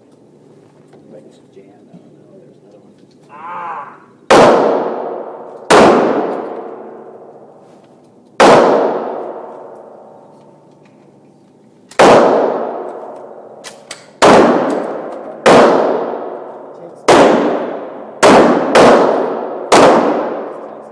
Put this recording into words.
Various handguns being shot in an indoor shooting range, mostly .40 and .45 caliber semi-automatic handguns. There were 3 or 4 shooting lanes in use at the time, so there is a lot of overlap of the various guns.